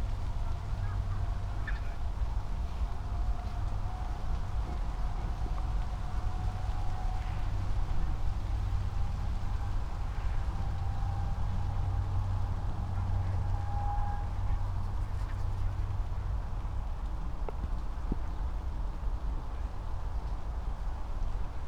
Tempelhofer Feld, Berlin, Deutschland - November ambience at the poplar trees
place revisited in November
Berlin, Germany, November 2016